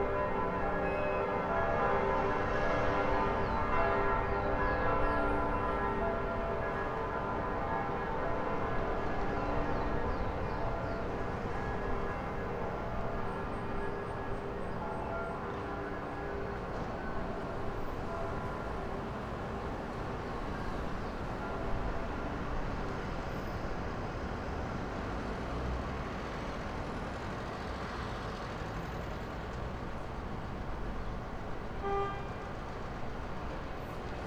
Valparaíso, Chile - church bells and car tyres
Sunday church bells and a typical sound of this corner: car horns and squeaking tyres, because of a very steep road with curves.
(Sony PCM D50)
Valparaíso, Región de Valparaíso, Chile